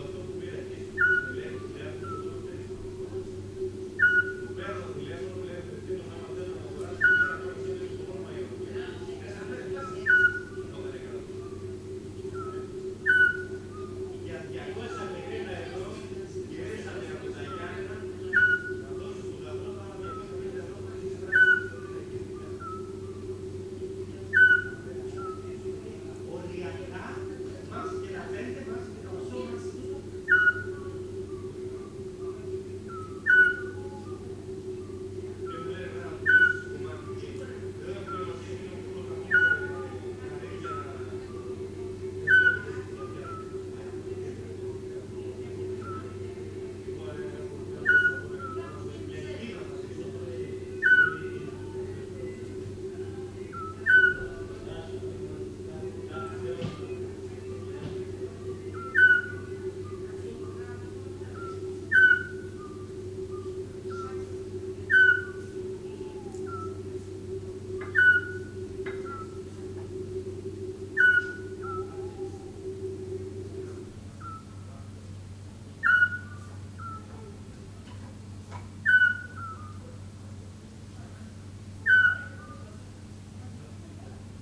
Monodendri village, scops owl on platan tree, voices from restaurant, water pump humming
greece, monodendri, evening, scops owl, voices
Tymfi, Greece, 25 May 2011